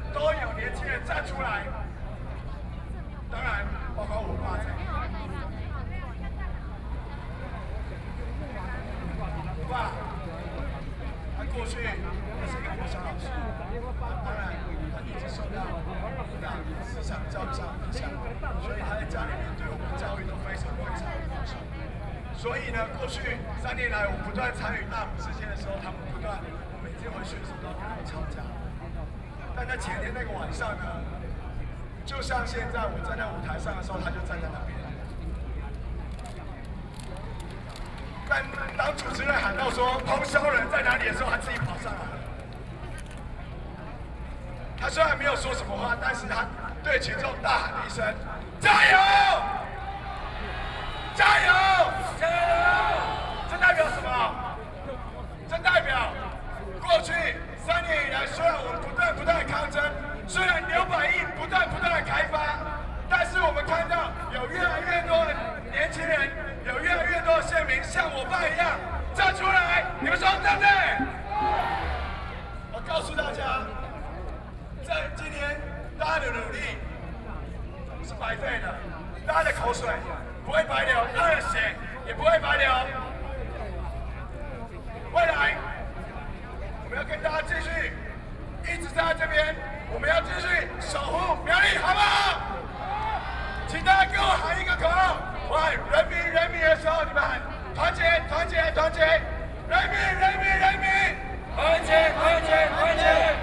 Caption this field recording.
Protest, Sony PCM D50 + Soundman OKM II